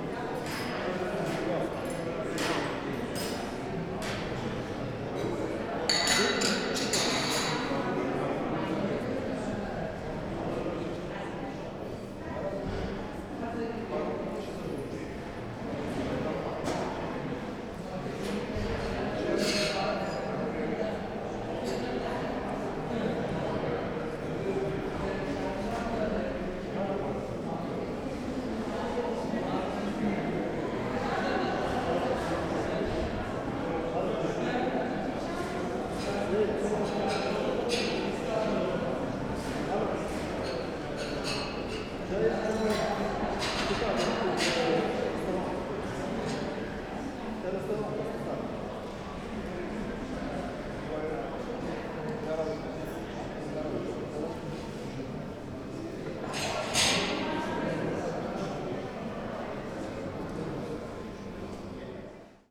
berlin, urban hospital - cafeteria
berlin, urban-hospital (urbankrankenhaus), cafeteria, just a few people
Berlin, Germany, January 2010